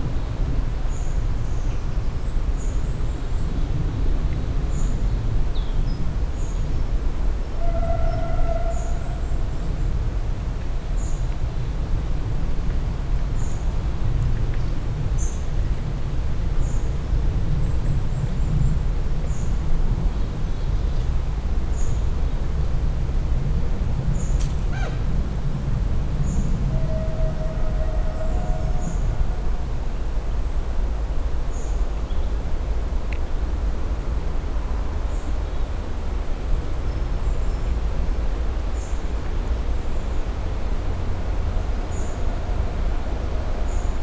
Radajewo, bike path along Warta river - forest abmience
(binaural recording) summer ambience in the forest near Radajewo village. (roland r-07 + luhd PM-01 bins)